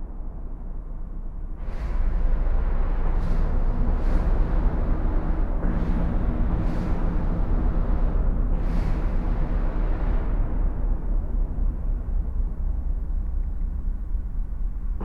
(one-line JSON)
{"title": "Court-St.-Étienne, Belgique - Inside the bridge", "date": "2016-04-08 12:30:00", "description": "Inside a concrete bridge, the sound of the tires scrubing the road. As it's complicate to understand, just know a concrete bridge is empty, and I'm just below the road. In fact, it's here the real sound of the life of a road, from inside.", "latitude": "50.62", "longitude": "4.53", "altitude": "86", "timezone": "Europe/Brussels"}